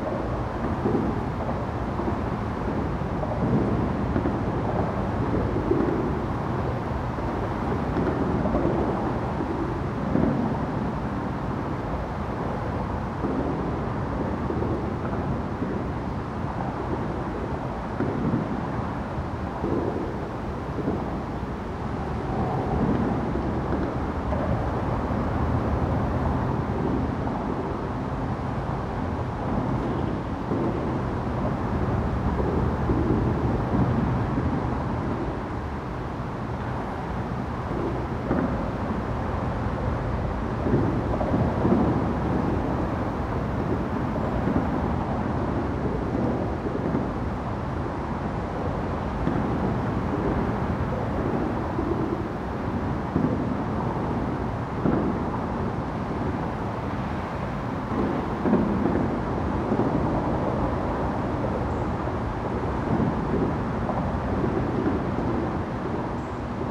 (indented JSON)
{
  "title": "Rottenwood Creek Trail, Atlanta, GA, USA - Under The I-75 Overpass",
  "date": "2020-10-01 16:12:00",
  "description": "Cars, trucks, and other vehicles pass over the I-75 overpass that runs over the Rottenwood Creek Trail. The result is a loud clunking sound that moves from side to side.\nRecorded on the uni mics of the Tascam Dr-100mkiii. Minor EQ was done in post to improve clarity.",
  "latitude": "33.88",
  "longitude": "-84.45",
  "altitude": "228",
  "timezone": "America/New_York"
}